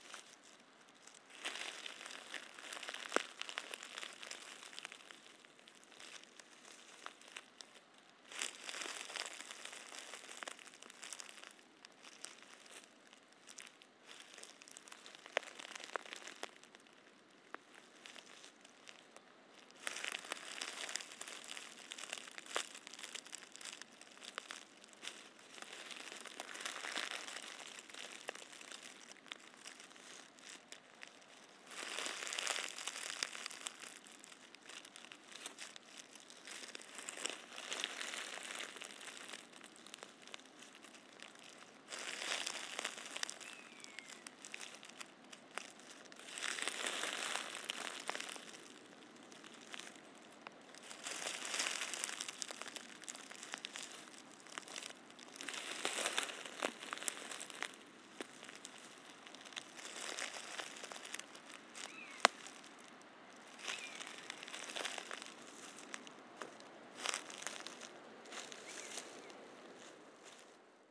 La Vallerie, Champsecret, France - Feuilles forêt dAndaines
I have fun crushing the dead leaves.